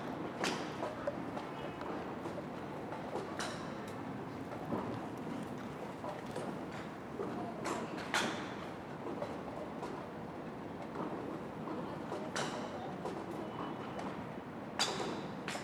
대한민국 서울특별시 서초구 반포동 707-3 - Screen Golf Center, Banpo-dong
Screen Golf Center, Banpo-dong, numerous practitioners hitting golf
반포동 스크린 골프 연습장, 골프공 치는 소리